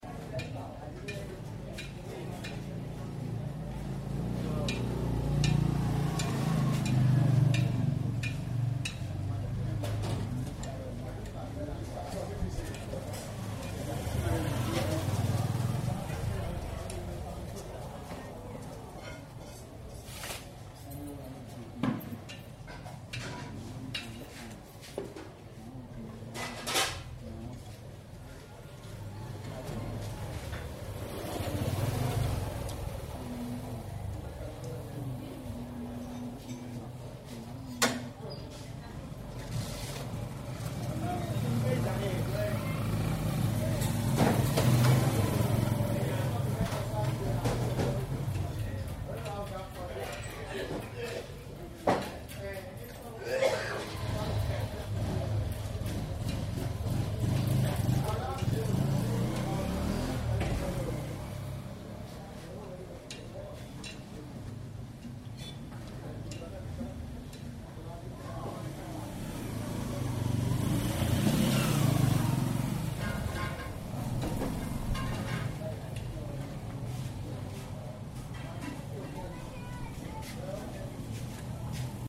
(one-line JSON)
{"title": "Bénin, Cotonou - Bénin-Cotonou-Zemsinthestreet", "date": "2018-12-06 11:54:00", "description": "We hope there will be many more!", "latitude": "6.36", "longitude": "2.40", "altitude": "8", "timezone": "Africa/Porto-Novo"}